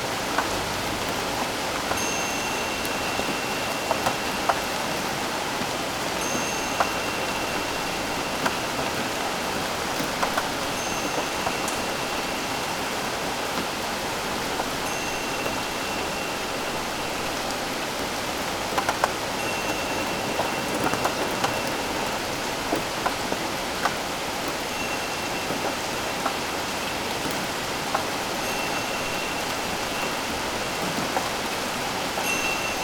from/behind window, Mladinska, Maribor, Slovenia - rain last night
30 May 2015